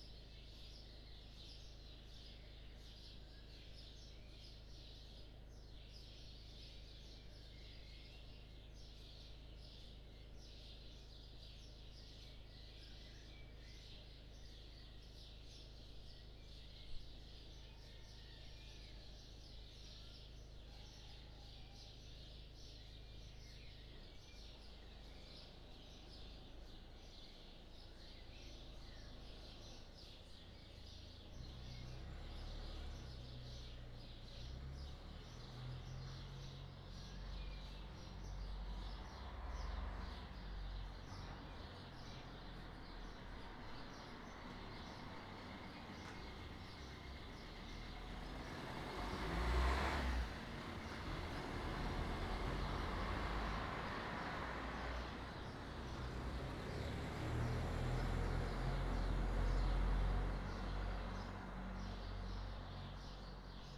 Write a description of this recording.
Borgofranco d Ivrea Waking up, half hour at 5am (church bells on 1´50´´), Village and fauna increasing sound entrophy of a summer morning, containing Birds, bells, newspaper delivery, etc.... H1 zoom + wind shield, inner court, place on the ground